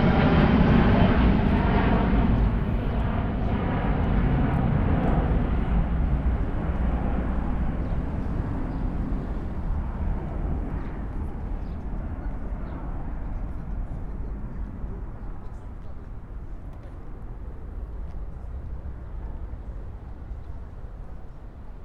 Universidade Lusofona De Humanidades E Tecnologias - Cofac - Cooperati, Campo Grande 376, 1700 Lisbo - Lusófona University Campus, Lisbon
Lisbon, Portugal, 17 May 2012